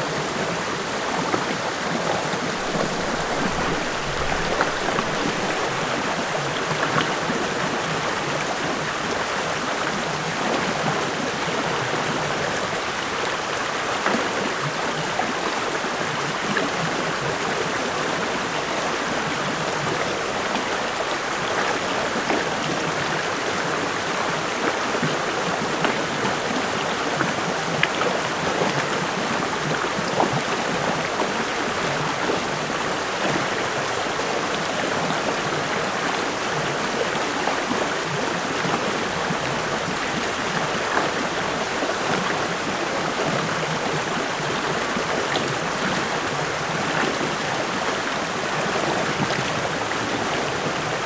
Les bruissements de l'eau de L'Ire rivière qui coule au fond d'une combe dans les Bauges, tout près de la cabane n°4 du Festival des cabanes.